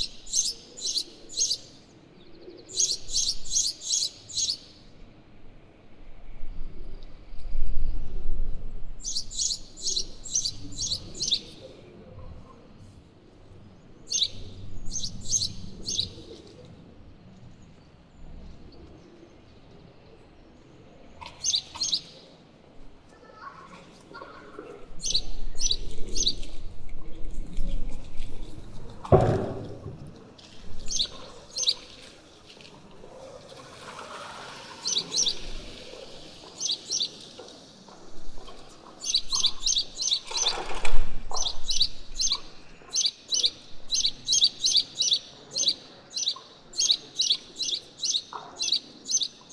Noisy sparrows discuss on a square called Voie de l'Utopie.
Ottignies-Louvain-la-Neuve, Belgium